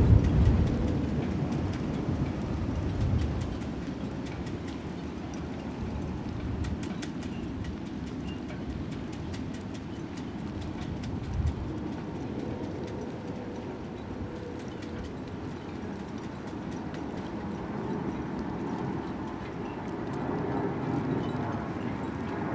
another recording of the complex jiggling and clanging of the boats rigging in the marina.
Lisbon, Portugal, 27 September 2013